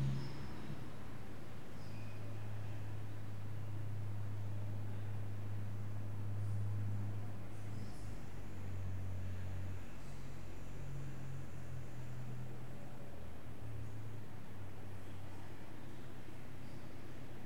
São Sebastião, Portugal - Igreja Matriz
The preaching sound at Igreja Matriz, and the reverberation architecture.
Açores, Portugal, 12 August 2019, 13:07